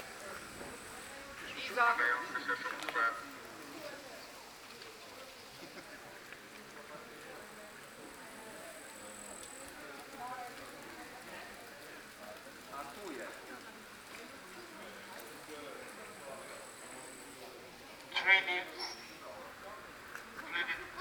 Główna, Sokołowsko, Poland - Weiss Weisslich 11e by Peter Ablinger
Weiss/Weisslisch 11e, performance Peter Ablinger
18 August 2019, ~12:00